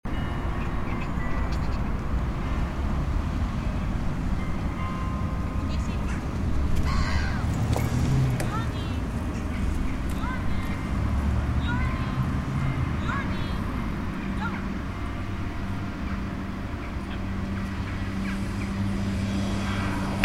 Bells of the Grote Kerk in the distance, on soundwalk
Zoetermeer, The Netherlands, 13 October, 17:30